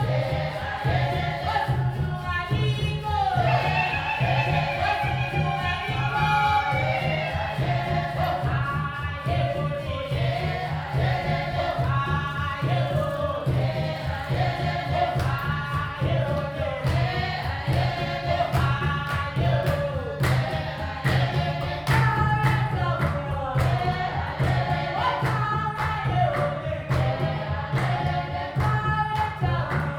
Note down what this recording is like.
This excerpt of a rainmaking song will take you in to a rehearsal of the Thandanani Women’s Ensemble. Imagine a group of about a dozen women in their 40s, 50s and 60s engaged in a most energetic dance and song… The Thandanani Women’s Ensemble was formed in 1991 by women in their 40s and 50s most of them from Mashobana township. The initial idea was to enjoy their arts and culture together and to share it with the young generation, thus the Ndebele word “thandanani” means, loving one another. The group is well known for their vibrant performances in traditional song and dance, established in the national arts industry and well versed as accapella performers in recordings and performers in film. You can find the entire list of recordings from that day archived here: